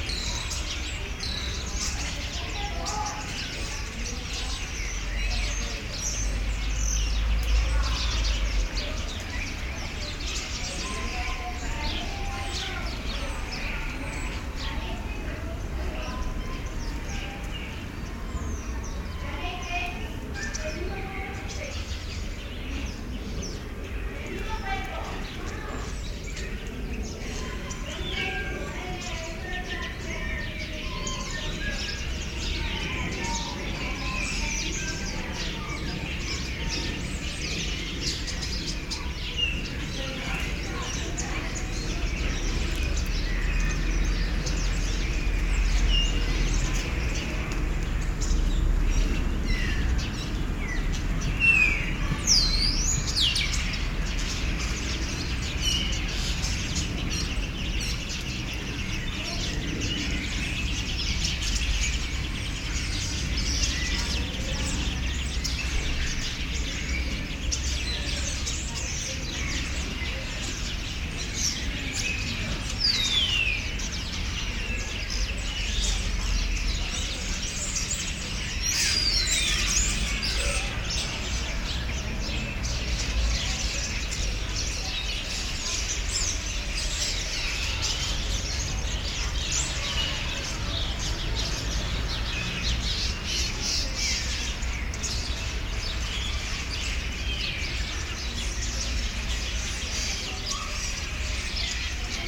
Binaural recording of evening birds at Colonia San Juan XXIII.
Recorded with Soundman OKM on Zoom H2n